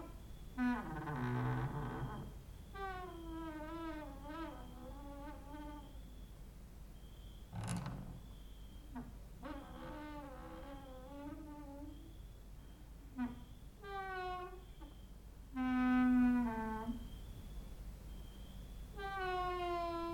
{"title": "Mladinska, Maribor, Slovenia - late night creaky lullaby for cricket/13", "date": "2012-08-22 00:07:00", "description": "cricket outside, exercising creaking with wooden doors inside", "latitude": "46.56", "longitude": "15.65", "altitude": "285", "timezone": "Europe/Ljubljana"}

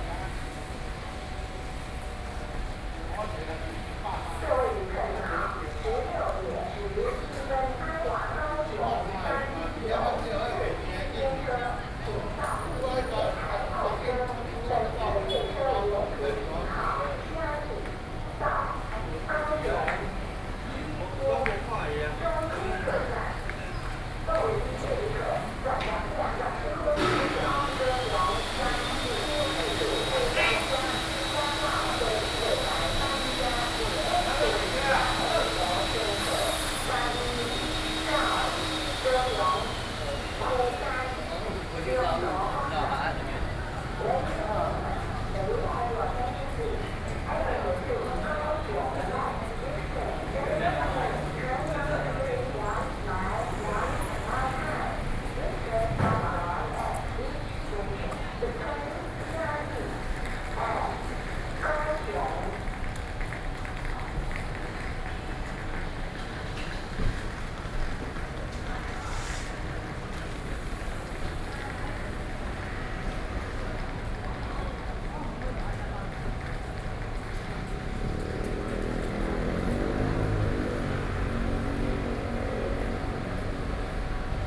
{"title": "ChiayiStation, TRA, Chiayi City - Station exit", "date": "2013-07-26 16:20:00", "description": "Construction noise, Message broadcasting station, Taxi driver dialogue, Sony PCM D50 + Soundman OKM II", "latitude": "23.48", "longitude": "120.44", "altitude": "35", "timezone": "Asia/Taipei"}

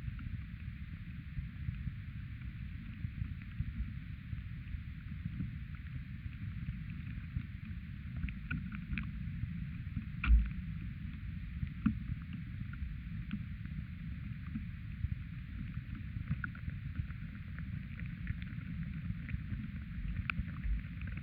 {"title": "contact with stone, Vyzuonos, Lithuania", "date": "2019-09-23 15:40:00", "description": "ancient heathen cult place with stones. contact microphone on stone just under the moss", "latitude": "55.58", "longitude": "25.47", "altitude": "111", "timezone": "Europe/Vilnius"}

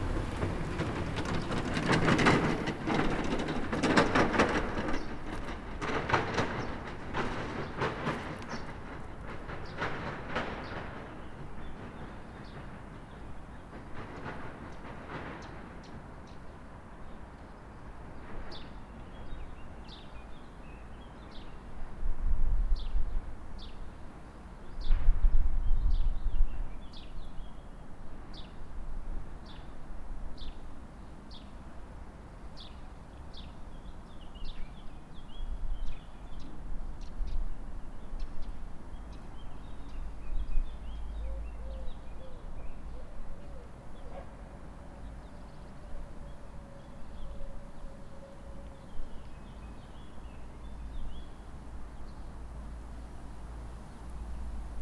{"title": "Bratislava-Old Town, Slowakei - sokolska 02", "date": "2016-04-02 07:46:00", "latitude": "48.16", "longitude": "17.10", "altitude": "196", "timezone": "Europe/Bratislava"}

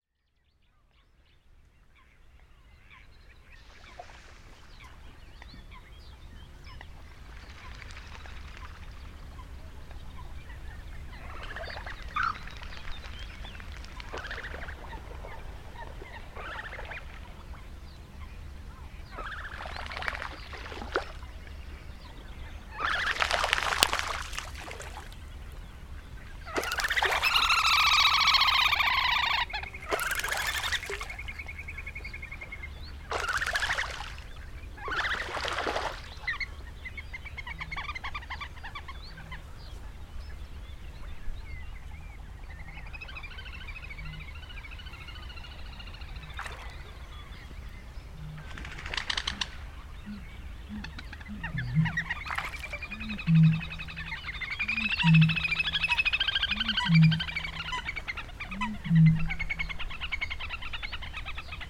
{"title": "Ham Wall, Avalon Marshes - Quarrelling Coots and Booming Bitterns", "date": "2017-05-07 15:30:00", "description": "A great afternoon at Ham Wall with Fran. No industrial noise. This track is a combination of two separate recordings. A homemade SASS (with Primo EM 172 capsules made up by Ian Brady of WSRS) to Olympus LS14 and a mono track from a MK66 to a FR2LE. A good pint afterwards at the Railway Inn served by the affable Ray", "latitude": "51.15", "longitude": "-2.77", "timezone": "Europe/London"}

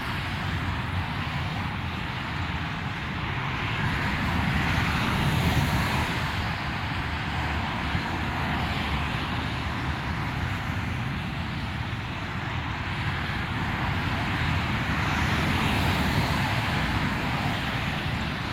{"title": "Shap, Penrith, UK - M6 motorway", "date": "2022-01-03 15:16:00", "description": "M6 motorway in the rain. Zoom H2n", "latitude": "54.52", "longitude": "-2.66", "altitude": "304", "timezone": "Europe/London"}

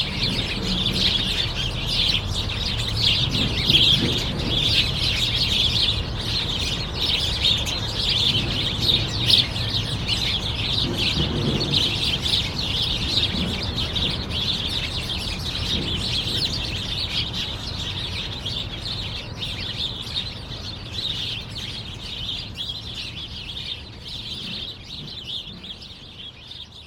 {"title": "La Bouille, France - Sparrows", "date": "2016-09-19 07:35:00", "description": "Into this tree, sparrows are fighting on the early morning, because these birds feel so good being fighting waking up !", "latitude": "49.35", "longitude": "0.93", "altitude": "6", "timezone": "Europe/Paris"}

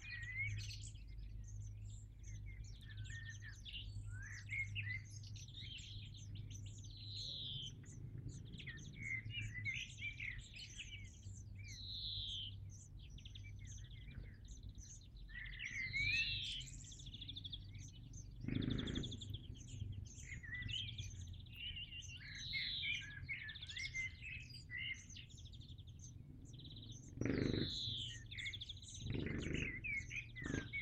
{"title": "Dessau-Roßlau, Deutschland - Schrebergartenanlage | allotments", "date": "2013-06-14 20:03:00", "description": "Schrebergarten - Piepsen aus einen Nistkasten, Vogelgesang, Kirchenglocken, Motarradknattern vom Weitem | Allotment - peeps out a nest box, bird singing, ringing church bells, far away rattle of a motorcycle", "latitude": "51.85", "longitude": "12.25", "altitude": "59", "timezone": "Europe/Berlin"}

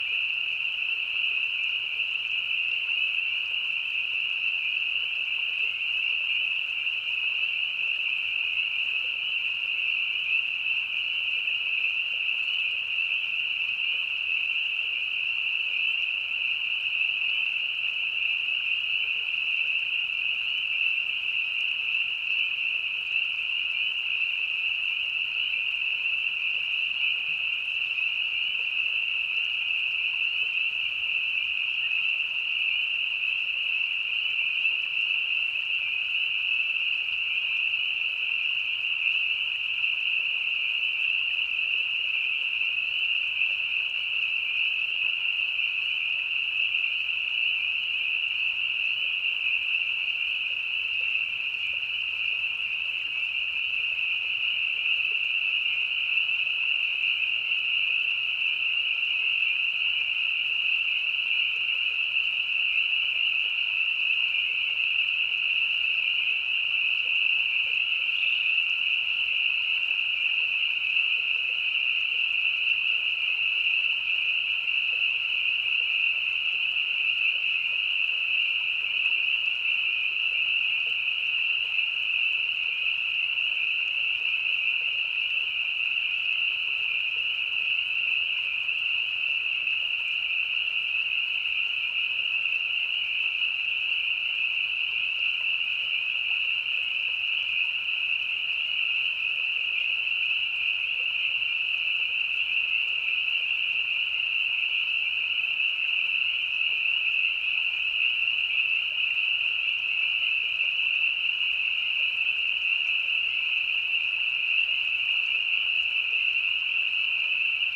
{"title": "Roy H. Park Preserve. Finger Lakes Land Trust - Spring Peepers (Pseudacris crucifer)", "date": "2021-04-07 21:00:00", "description": "Spring Peeper(Pseudacris crucifer). Recorded at the edge of a marsh next to a small stream.\nMKH 8040 spaced 1.5 metres apart on stands.\nRunning water, some light air traffic and distant cars.", "latitude": "42.43", "longitude": "-76.32", "altitude": "435", "timezone": "America/New_York"}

